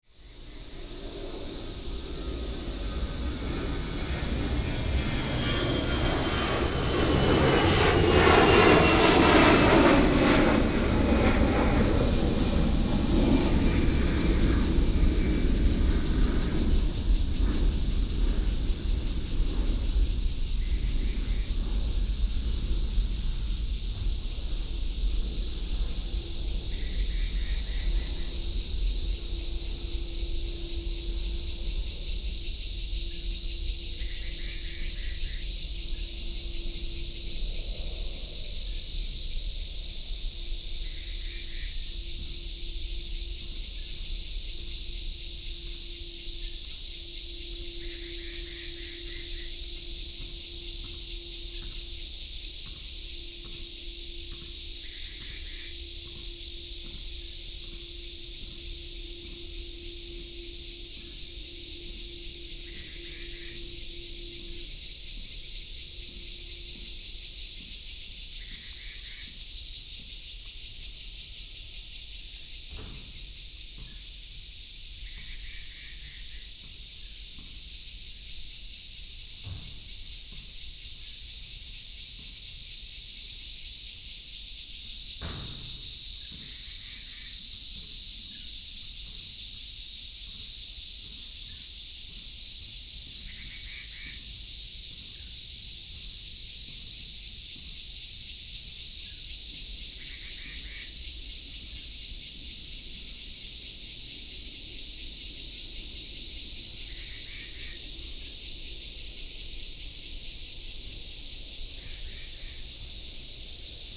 Next to primary school, birds sound, take off, Cicada
Taoyuan City, Dayuan District, 23 July, ~18:00